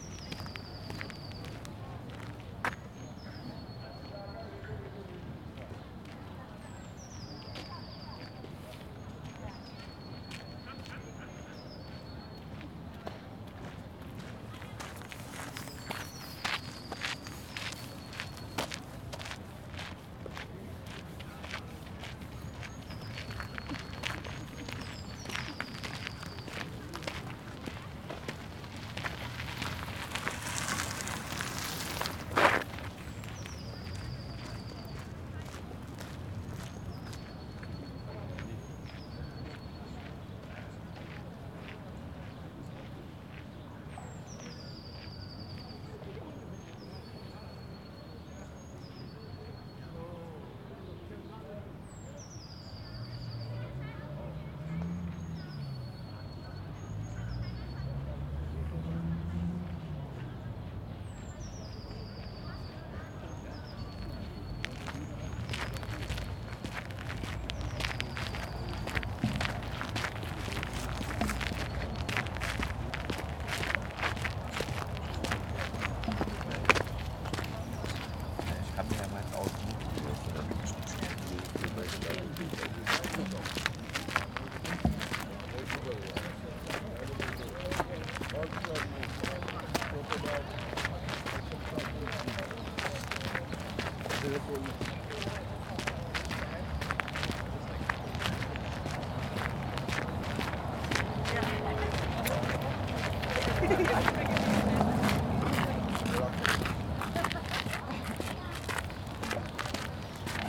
berlin, paul-lincke-ufer: promenade - walk from Ohlauer to Kottbusser bridge
Springtime by the canal!
Do the birds only feel louder because all perception has changed in times of the pandemic corona virus? Or are they actually louder?
Many people - like me - seem to take breaks from home office/schooling/etc to take a walk alone or with one other person.
The topic of 90 % of the conversations is the virus and its effects.
Recorded on the sunny side of the canal in the afternoon, using a Sony PCM D100